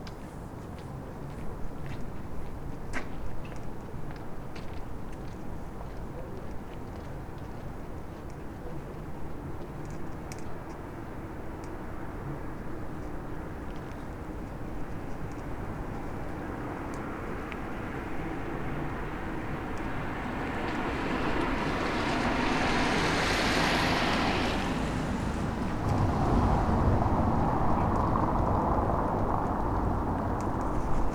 Berlin: Vermessungspunkt Friedelstraße / Maybachufer - Klangvermessung Kreuzkölln ::: 04.01.2012 ::: 01:46
Berlin, Germany, 4 January, 01:46